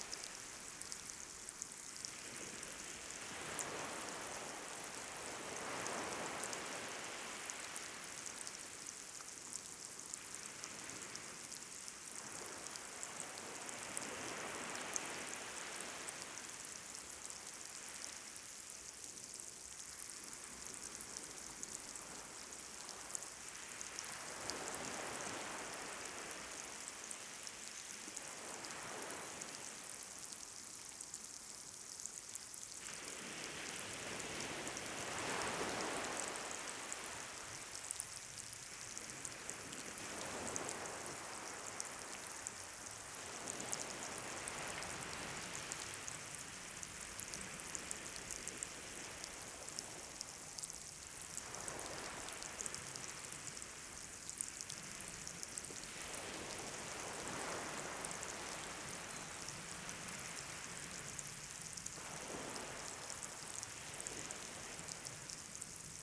Berck, France - Berck - Plage
Berck - Plage
Avant la marée haute - des bulles d'air crépitent à la surface.